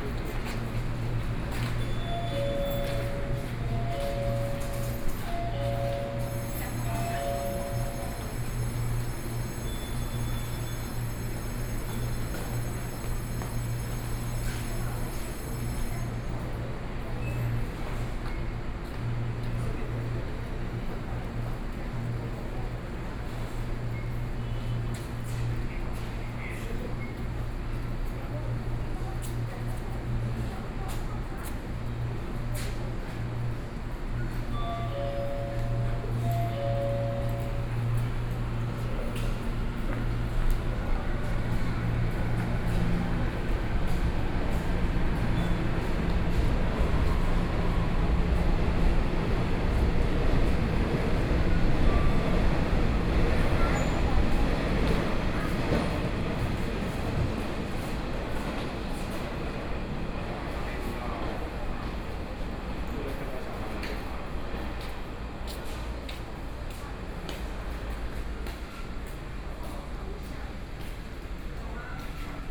Zhubei Station, Taiwan - Station hall
The new station hall, Zoom H4n+ Soundman OKM II